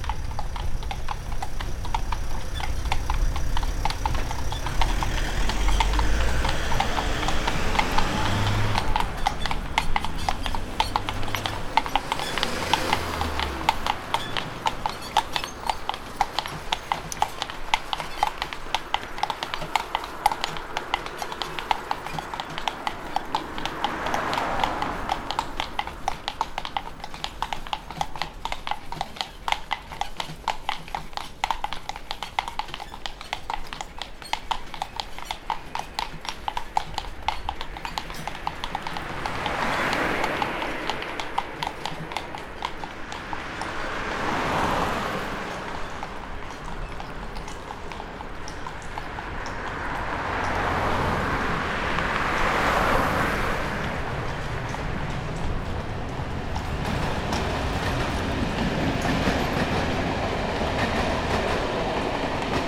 Horses inside tunnel, Bubeneč
While walking towards the Ecotechnical Museum in Bubeneč we were joined by a pair of horses for a while. They were scared of passing cars and the coachman drove the horses into the tunnel. A train was just passing.
19 December 2009